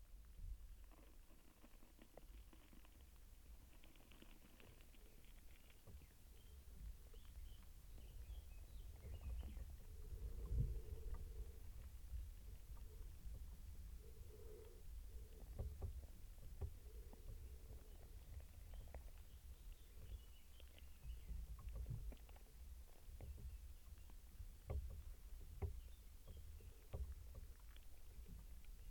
Ganderwick is a small patch of woodland which in Celtic times was a lake with ferry crossing. This woodland is a haven for wildlife amidst vast industrial monocrops. Here is a vast tree in decay busy with hidden life.
Stereo pair Jez Riley French contact microphones + SoundDevicesMixPre3
East of England, England, United Kingdom, 26 April 2022